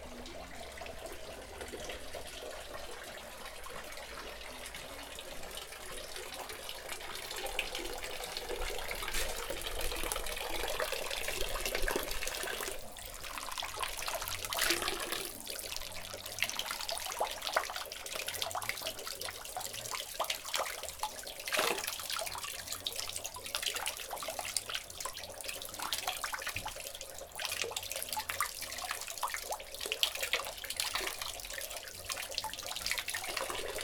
Espace culturel Assens, Brunnen
Espace culturel Assens, alter Brunnengeschichten neben zeitgenössischer Kunst.
2 October 2011, 12:38